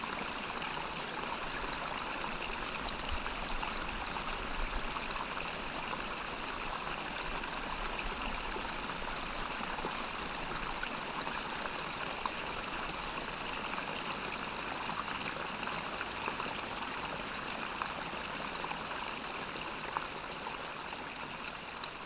Voverynė, Lithuania, springlets valley
there is real valley of small springlets near the swamp. combined recording of a pair of omni mics (closer details) and sennheiser ambeo for atmosphere
Utenos apskritis, Lietuva, March 2021